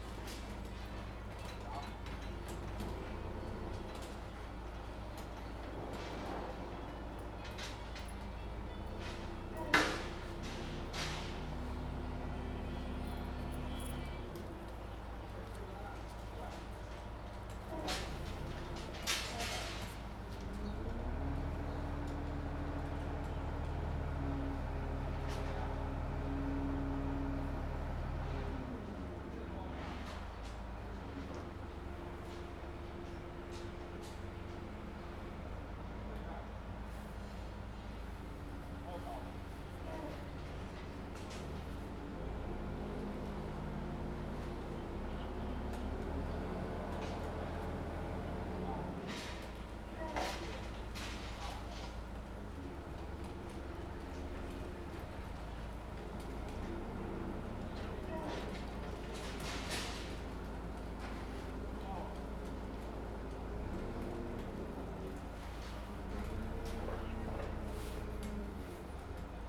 保護廟, Jinhu Township - In the temple
In the temple, Birds singing, Construction Sound
Zoom H2n MS +XY
金門縣 (Kinmen), 福建省, Mainland - Taiwan Border